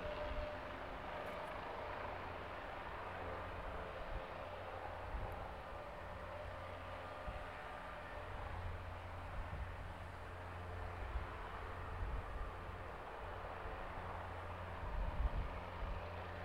Old Sarum Airfield, Salisbury, UK - 009 Aircraft idling, car coming and going